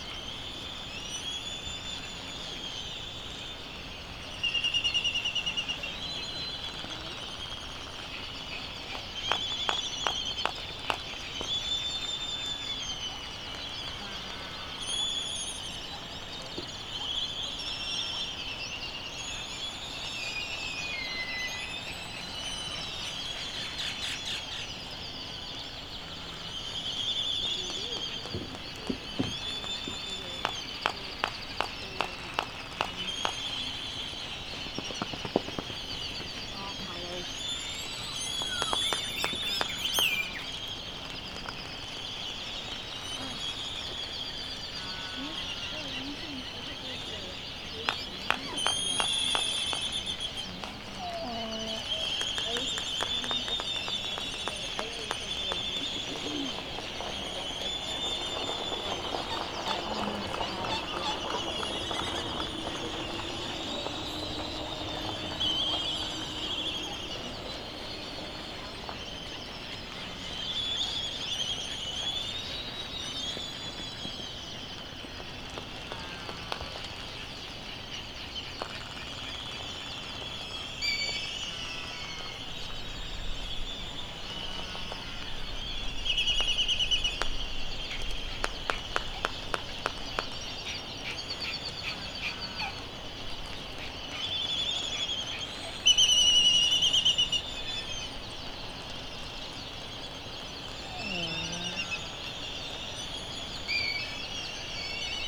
United States Minor Outlying Islands - Laysan albatross soundscape ...
Sand Island ... Midway Atoll ... open lavalier mics ... sometimes everything just kicked off ... this is one of those moments ... some birds may have been returning with food or an influx of youngsters ..? bird calls ... laysan albatross ... white tern ... bonin petrel ... black noddy ... canaries ... background noise ... traffic ... voices ...
16 March 2012, 18:35